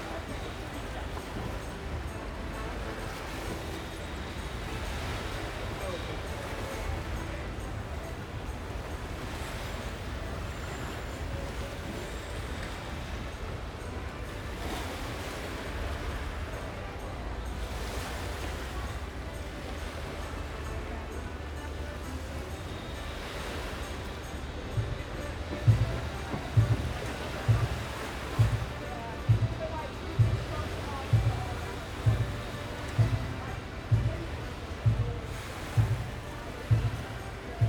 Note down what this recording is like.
On the river path one walks though short tunnels under bridges, beside river beaches, more tunnels and open spaces in very quick succession. All have a different soundscapes and an acoustic character that constantly change according to weather tides, time of day, season and people's activities. Here the waves slosh on a beach fast disappearing under the rising tide as a folk musician plays in the tunnel under the road. He taps the beat with his foot. There's a certain rhythmic similarity with the waves.